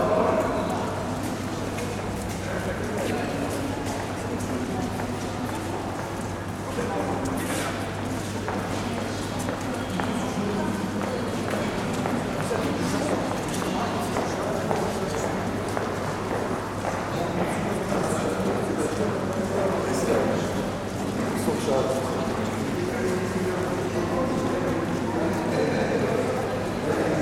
herne-wanne - wanne-eickel hbf
wanne-eickel hbf
18 December